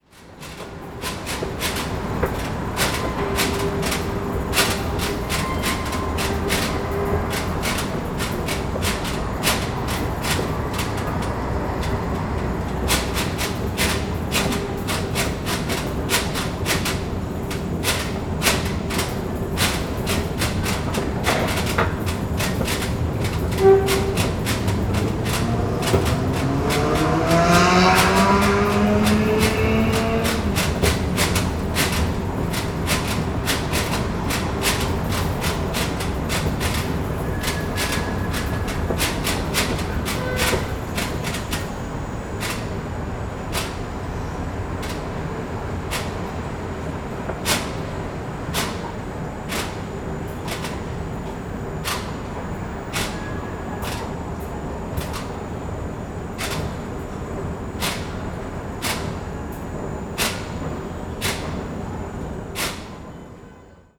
another escalator at the Kaponiera underpass in the center of Poznan. this escalator makes some really nasty metalic, grinding clashes. (roland r-07)